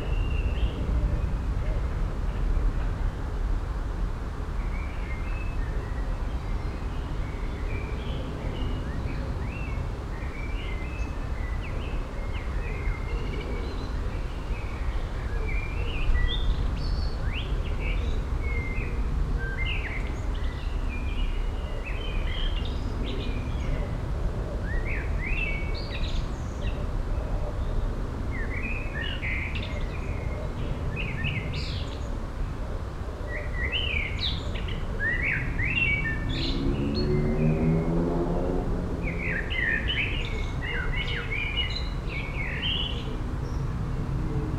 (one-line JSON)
{"title": "Düsseldorf, saarwerden street, garden - düsseldorf, saarwerden street, garden", "date": "2011-05-03 13:52:00", "description": "inside a back house garden in the warm, mellow windy evening. a blackbird singing in the early spring.\nsoundmap nrw - social ambiences and topographic field recordings", "latitude": "51.24", "longitude": "6.74", "altitude": "38", "timezone": "Europe/Berlin"}